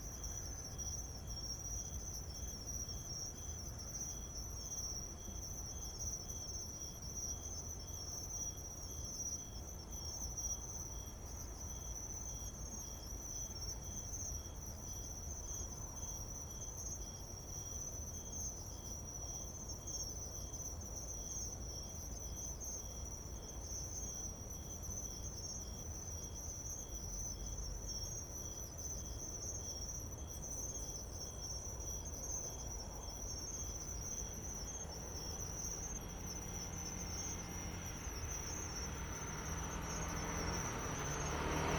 Zhongxing Rd., Guanyin Dist., Taoyuan City - Insects sound
Late night street, Grass, Insects, Zoom H2n MS+XY
20 September 2017, 23:35